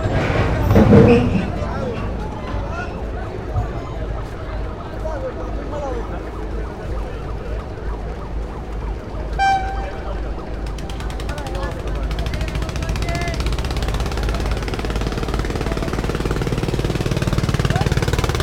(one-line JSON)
{"title": "Sadarghat launch terminal, Dhaka, Bangladesh - Sadarghat launch terminal", "date": "2019-06-12 16:35:00", "description": "Sadarghat launch terminal is a very busy port. You get launches to go to many directions from Dhaka from this port. It is always busy, always full of people and always full of boats and vessels.", "latitude": "23.71", "longitude": "90.41", "altitude": "11", "timezone": "Asia/Dhaka"}